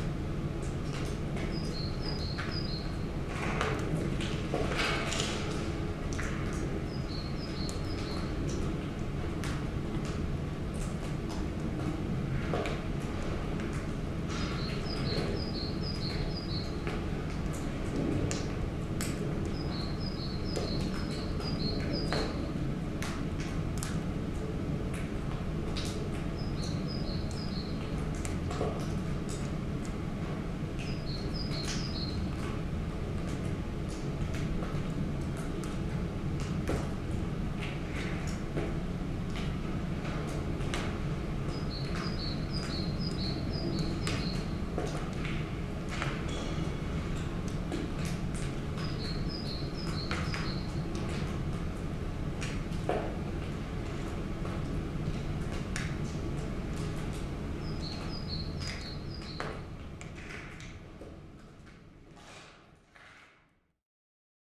Glauzig Factory Ruin
Glauzig, factory, ruin, salt, sugar, tobacco, DDR, Background Listening Post